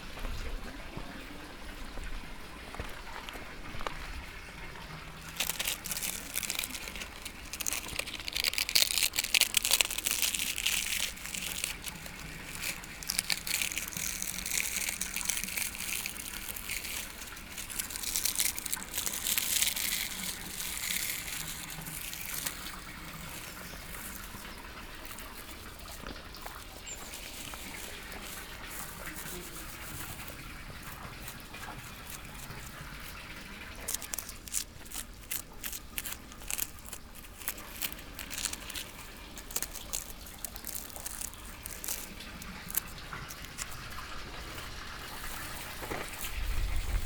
Povoa Das Leiras, Portugal, walk - PovoaDasLeirasWalk
walk through the village with binaural microphones, from time to time manipulating objects. recorded together with Ginte Zulyte. Elke wearing in ear microphones, Ginte listening through headphones.